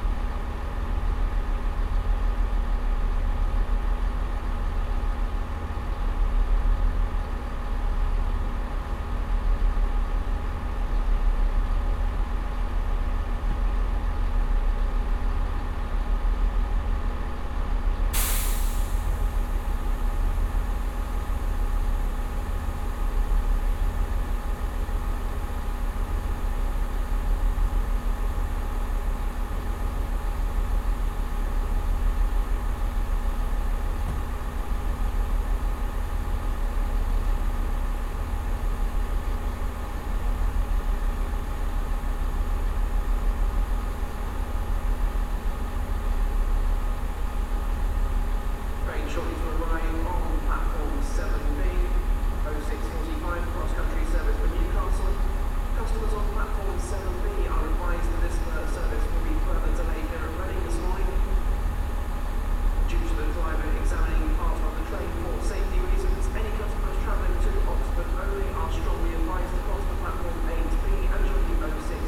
Bagnall Way, Reading, UK - Reading Railway Station
Early morning meditation at the eastern end of Reading railway station whilst waiting for a train. The ticking over of the train engine behind me masks more distant sounds, interrupted by male and automated female announcements, the metallic chirping and ringing of rails as a freight train slowly passes, pressure bursts and doors opening and closing. (Tascam DR-05 with binaural PM-01s)
6 October 2017